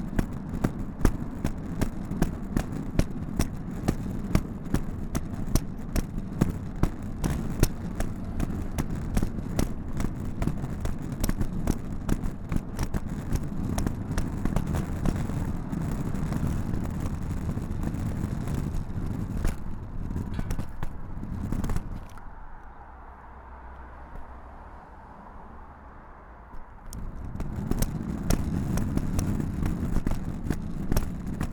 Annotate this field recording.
Recorded as part of the 'Put The Needle On The Record' project by Laurence Colbert in 2019.